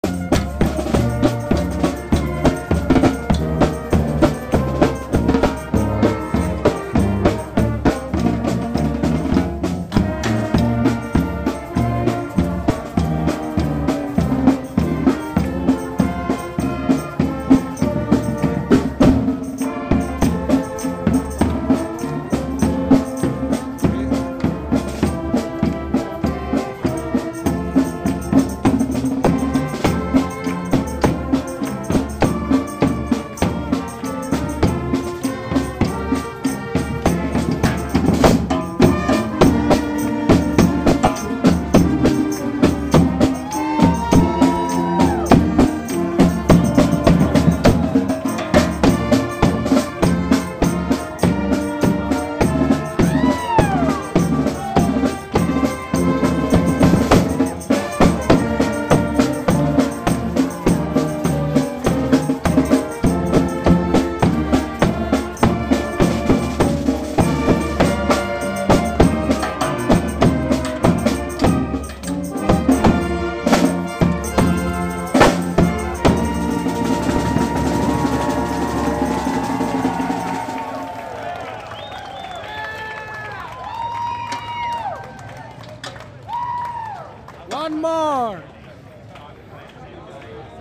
The Internationale / international workers anthem / performed in San Francisco by marching band during Keystone pipeline rally ... I couldn't help to smile because last time I have heard this song was probably in September 1989 in Czechoslovakia under completely different circumstances ... This recording goes to all my friends over in "EAST BLOCK"